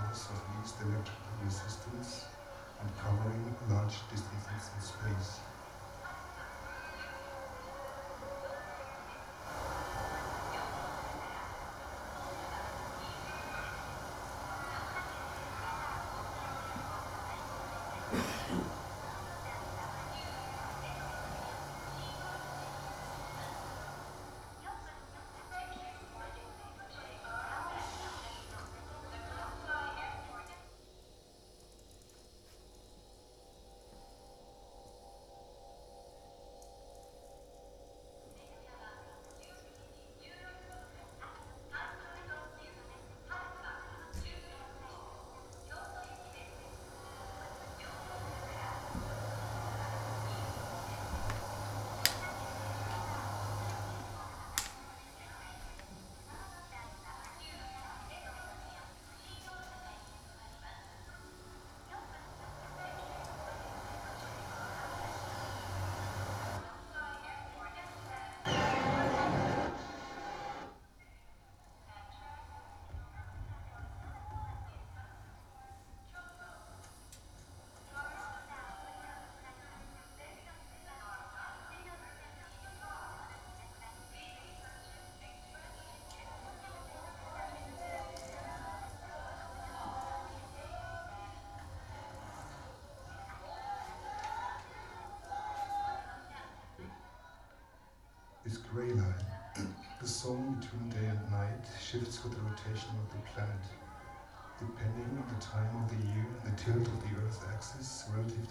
berlin, lychener straße: ausland - the city, the country & me: udo noll performs -surfing the gray line-
udo noll performs -surfing the gray line- during the evening -fields of sound, fields of light- curated by peter cusack
the city, the country & me: february 6, 2015
Berlin, Germany, 6 February 2015, 22:17